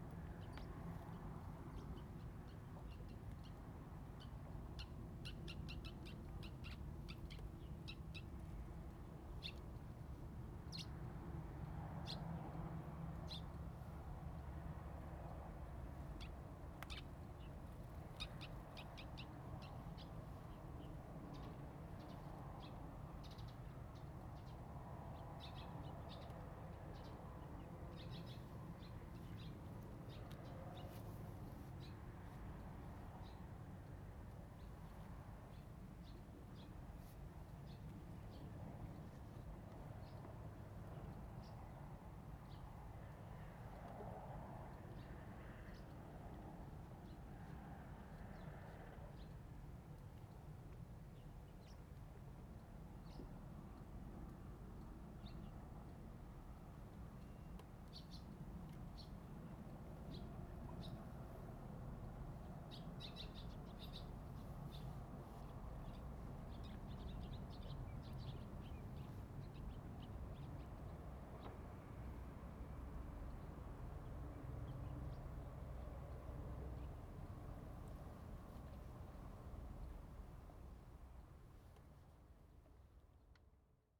成功國小, Huxi Township - Next to the reservoir
Next to the reservoir, next the school, Birds singing
Zoom H2n MS +XY